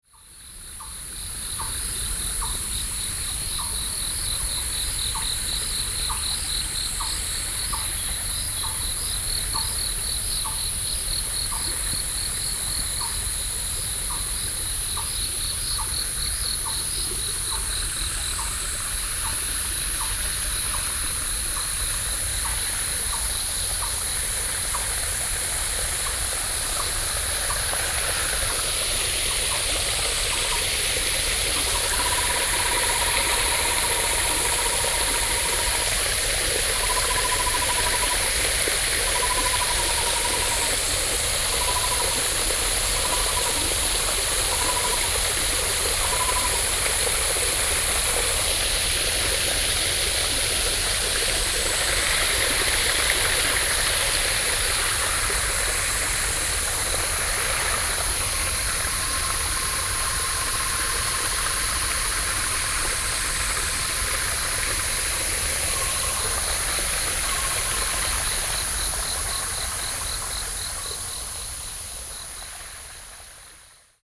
Beitou, Taipei - Environmental sounds
Morning in the mountains, Sony PCM D50 + Soundman OKM II
北投區, 台北市 (Taipei City), 中華民國